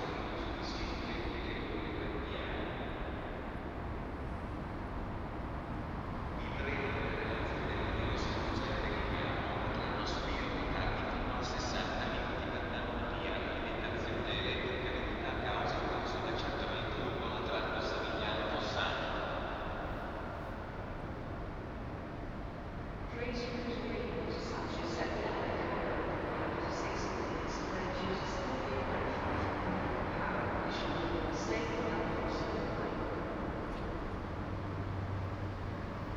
Ascolto il tuo cuore, città. I listen to your heart, city. Several chapters **SCROLL DOWN FOR ALL RECORDINGS** - Morning AR with break in the time of COVID19 Soundwalk
"47-Morning AR with break in the time of COVID19" Soundwalk
Chapter XLVII of Ascolto il tuo cuore, città. I listen to your heart, city
Thursday April 16th 2020. Round trip through San Salvario district, the railway station of Porta Nuova and Corso Re Umberto, thirty seven days after emergency disposition due to the epidemic of COVID19.
Round trip are two separate recorded paths: here the two audio fils are joined in a single file separated by a silence of 7 seconds.
First path: beginning at 7:36 a.m. duration 20’43”
second path: beginning at 8:26 a.m. duration 34’20”
As binaural recording is suggested headphones listening.
Both paths are associated with synchronized GPS track recorded in the (kmz, kml, gpx) files downloadable here:
first path:
second path:
Torino, Piemonte, Italia